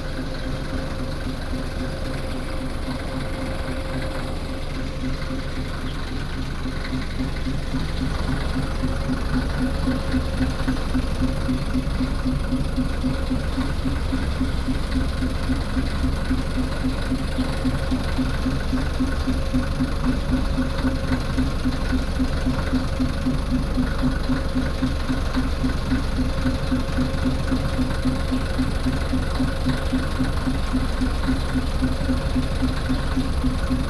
Yingge Station, New Taipei City - The front of the train
The front of the train
Binaural recordings
Sony PCM D50 + Soundman OKM II
20 June 2012, ~08:00